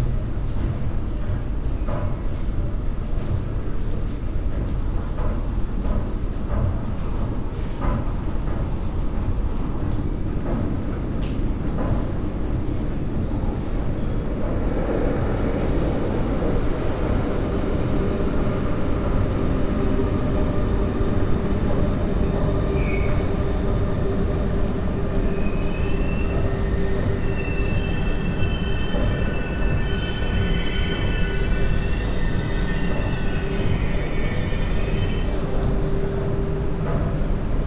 paris, rer station, val de fontenay
in as subway station - train leaves, another arrives
cityscapes international: socail ambiences and topographic field recordings
Fontenay-sous-Bois, France